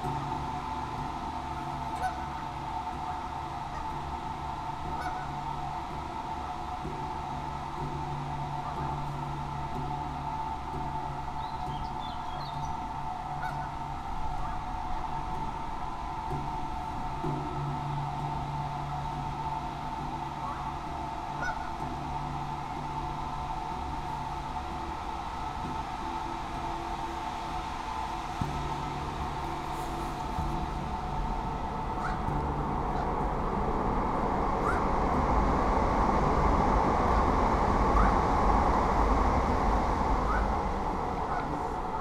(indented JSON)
{
  "title": "Horseshoe Bridge, Thames Path, Reading, UK - Tibetan Railings, Trains and Gas",
  "date": "2019-06-09 16:28:00",
  "description": "This is the second recording session I've had here and this time realised that the railings surrounding the gas pipes had a lovely sonic quality and so 'played them with my knuckle, as life carried on around.... Sony M10 with built in mics.",
  "latitude": "51.46",
  "longitude": "-0.95",
  "altitude": "39",
  "timezone": "Europe/London"
}